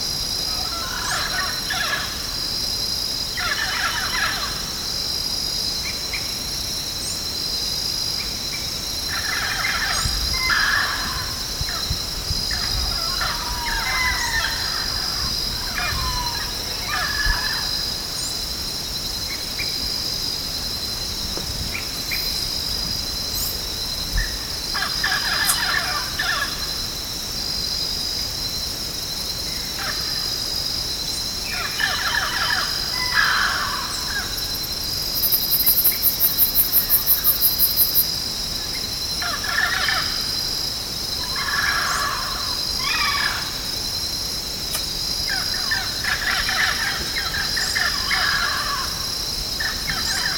Mapia- Amazonas, Brazilië - noisy birds
Noisy birds in the Amazon
Amazonas, Região Norte, Brasil, 3 July 1996